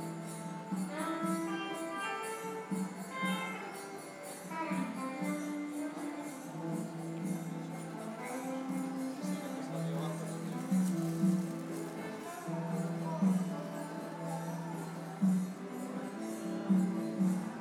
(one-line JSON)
{"title": "Rijeka, Korzo, HareKrisna vs Street Player", "date": "2010-07-03 16:30:00", "latitude": "45.33", "longitude": "14.44", "altitude": "11", "timezone": "localtime"}